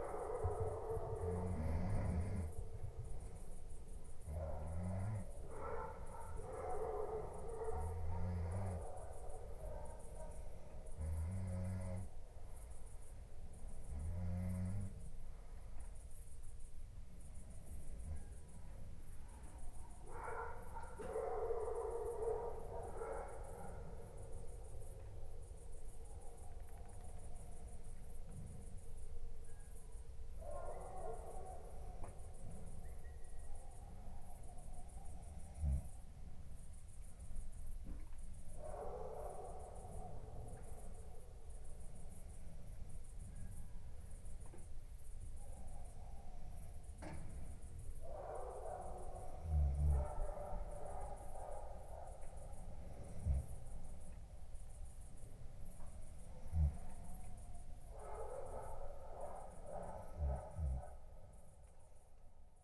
{
  "title": "Pod Lipą, Borsuki, Poland - (835b AB) Crickets into dogs",
  "date": "2021-08-21 01:10:00",
  "description": "Overnight recording caught an interesting transition from crickets to dogs barking (no edit has been made).\nRecorded in AB stereo (17cm wide) with Sennheiser MKH8020 on Sound Devices MixPre6-II",
  "latitude": "52.28",
  "longitude": "23.10",
  "altitude": "129",
  "timezone": "Europe/Warsaw"
}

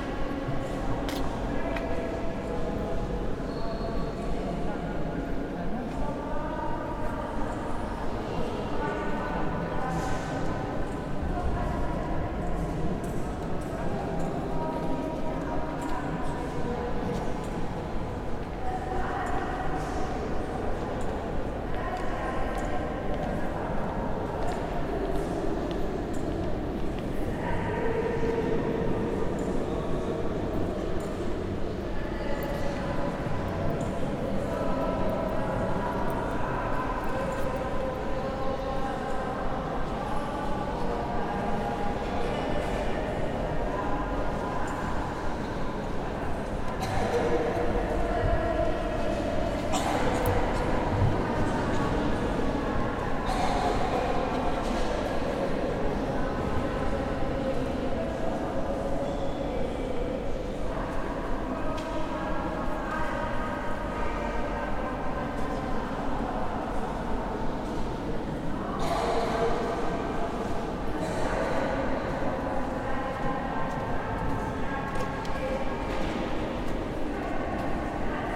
{
  "date": "2011-06-28 01:55:00",
  "description": "Ambient inside St. Mary Church in Gdansk, Poland",
  "latitude": "54.35",
  "longitude": "18.65",
  "altitude": "11",
  "timezone": "Europe/Warsaw"
}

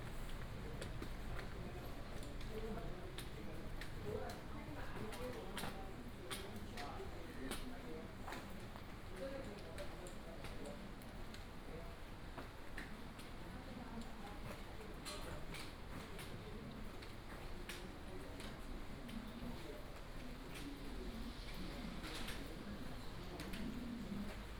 {
  "title": "雙溪區長安街, New Taipei City - Walking in the old alley",
  "date": "2018-11-06 09:08:00",
  "description": "Stream sound, Walking in the old alley\nSonu PCM D100 XY",
  "latitude": "25.03",
  "longitude": "121.86",
  "altitude": "30",
  "timezone": "GMT+1"
}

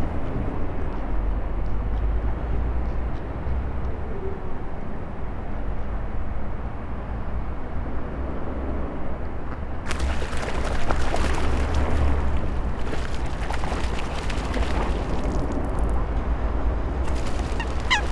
{
  "title": "Binckhorst, Den Haag - Birds",
  "date": "2012-02-06 19:04:00",
  "description": "Some ducks and coots swimming and making some interesting sounds. At 2:25, a small bird came to visit them by flying very close to the water. In the background you can hear the cars driving over the carbridge at the Binckhorstlaan.\nRecorded using a Senheiser ME66, Edirol R-44 and Rycote suspension & windshield kit.",
  "latitude": "52.06",
  "longitude": "4.34",
  "altitude": "1",
  "timezone": "Europe/Amsterdam"
}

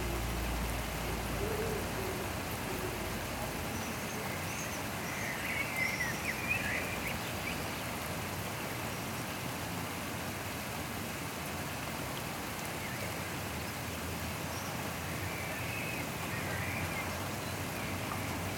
{
  "title": "In the gardens behind the houses, Reading, UK - Blackbird in the rain",
  "date": "2017-05-17 13:28:00",
  "description": "This is the sound of the wood pigeons and the blackbirds singing their hearts out in the rain. Not sure why, but Blackbirds in particular seem to really love the rain.",
  "latitude": "51.44",
  "longitude": "-0.97",
  "altitude": "55",
  "timezone": "Europe/London"
}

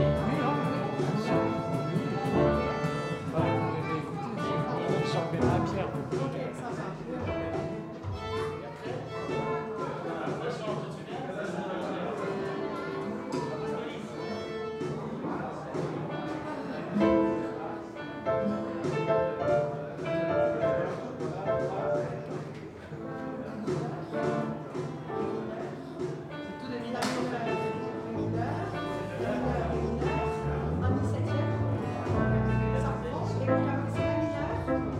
{
  "title": "Rue du Grand Hospice, Bruxelles, Belgique - Fête du Solstice at Club Antonin Artaud",
  "date": "2019-06-18 16:00:00",
  "description": "Music in the upper room.\nTech Note : Sony PCM-M10 internal microphones.",
  "latitude": "50.85",
  "longitude": "4.35",
  "altitude": "21",
  "timezone": "Europe/Brussels"
}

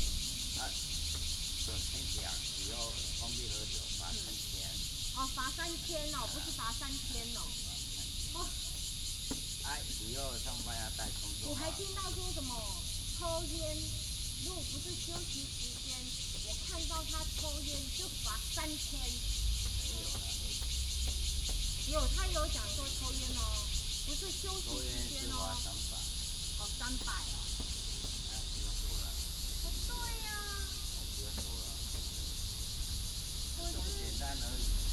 {
  "title": "桃園光影, Zhongli Dist., Taoyuan City - Off work",
  "date": "2017-07-10 17:02:00",
  "description": "In the parking lot, Off work, Traffic sound, Cicadas, birds sound",
  "latitude": "24.94",
  "longitude": "121.24",
  "altitude": "157",
  "timezone": "Asia/Taipei"
}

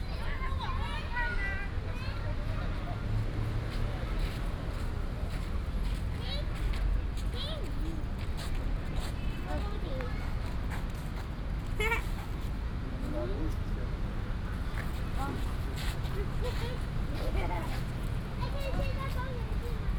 {
  "title": "忠孝公園, Zhongzheng District, Taipei City - in the Park",
  "date": "2015-08-01 18:02:00",
  "description": "in the Park\nPlease turn up the volume a little. Binaural recordings, Sony PCM D100+ Soundman OKM II",
  "latitude": "25.04",
  "longitude": "121.53",
  "altitude": "14",
  "timezone": "Asia/Taipei"
}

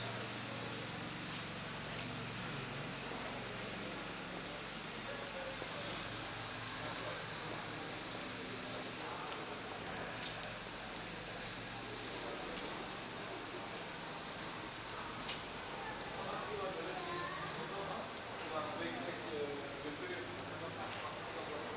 {
  "title": "Hühnermarkt, Frankfurt am Main, Deutschland - 14th of August 2018 Teil 2",
  "date": "2018-08-14 17:45:00",
  "description": "Walk from the fountain at Hühnermarkt, down the 'Königsweg', where German Kaiser used to walk after they became Kaiser - again several chats, spanish among others and a tourist guide - in the background a construction site, finishing the 'old town-project'.",
  "latitude": "50.11",
  "longitude": "8.68",
  "altitude": "100",
  "timezone": "GMT+1"
}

